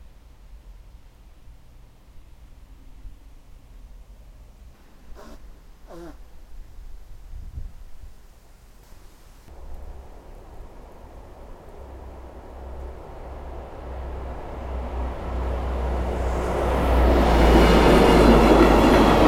welschen ennest, hagener str, bahnübergang
an bahnübergang, nachmittags, wartend, ein fahrradfahrer schneuzt sich, durchfahrt einer einzelnen lok - aufnahme aus offenem pkw dach
soundmap nrw: social ambiences/ listen to the people - in & outdoor nearfield recordings